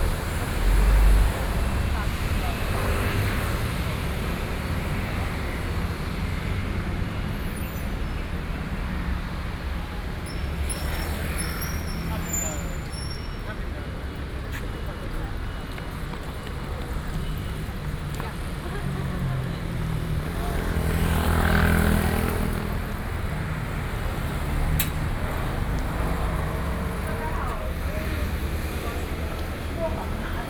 Nanyang Street, Taipei - soundwalk
soundwalk, Sony PCM D50 + Soundman OKM II